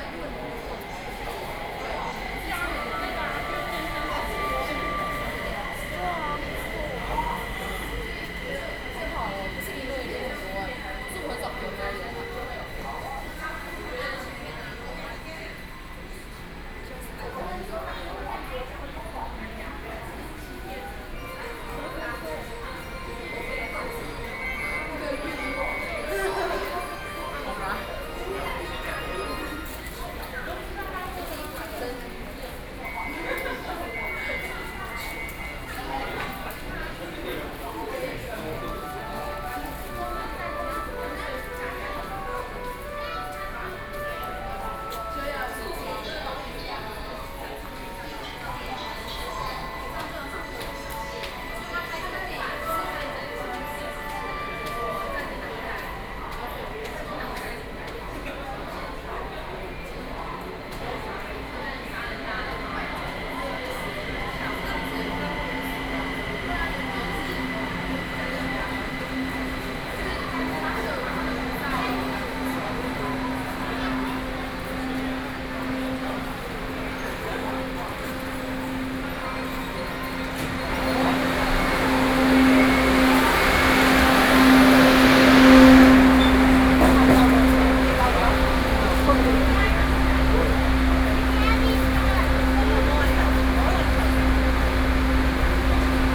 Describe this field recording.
Waiting for the MRT, Sony PCM D50 + Soundman OKM II